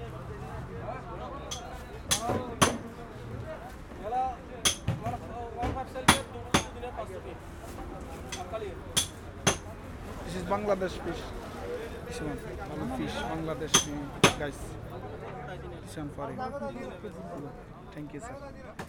Hidd, Bahreïn - Marché aux poissons de Hidd - Bahrain
Fin de journée au marché aux poissons de Hidd - Bahrain
Hidd fish Market - Bahrain
محافظة المحرق, البحرين, 8 June, 18:30